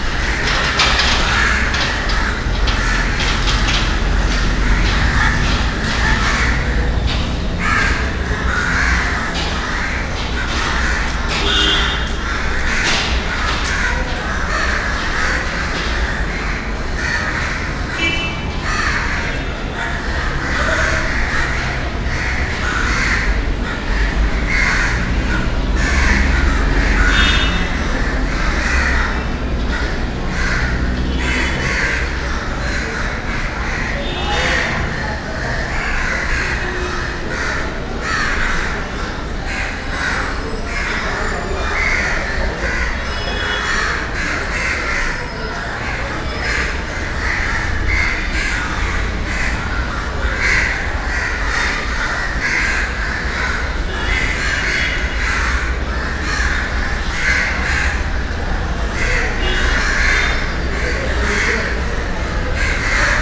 India, Mumbai, jyotiba Phule Market, Crawford meat market, crows, meat